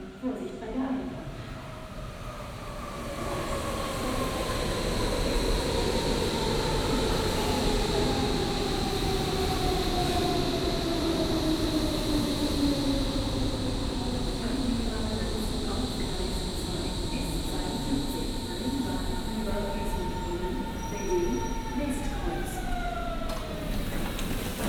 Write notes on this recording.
Saturday morning, almost no one on the platform, only trains, announcements and pigeons, iPhone 11 Sennheiser Ambeo Smart